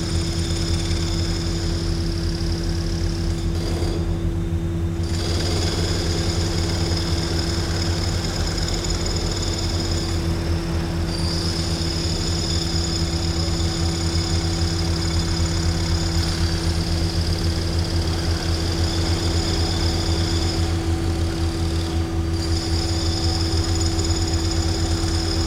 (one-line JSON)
{"title": "Hofburg construction noise, Vienna", "date": "2011-08-16 15:35:00", "description": "Hofburg construction noise", "latitude": "48.21", "longitude": "16.36", "altitude": "180", "timezone": "Europe/Vienna"}